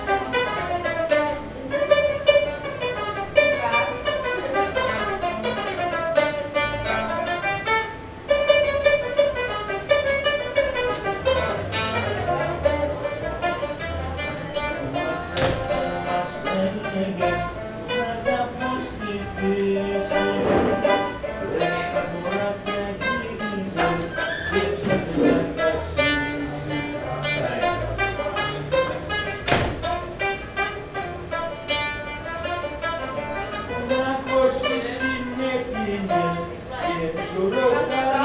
berlin: rosenthaler straße: café cinema - the city, the country & me: rebetiko singer
old man sings a rebetiko song, ventilation of the smoking room
the city, the country & me: january 31, 2014
Berlin, Germany, 31 January 2014, ~01:00